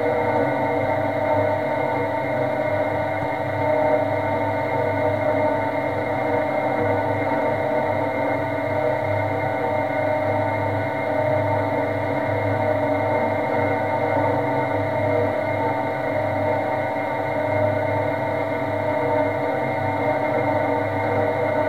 Quai Saint-Pierre, Toulouse, France - hydroelectric power station
The EDF Bazacle Complex, hydroelectric power station
Vibration Pickup C411 PP AKG on the turbine
Zoom H4n
France métropolitaine, France, 29 May 2021, 13:00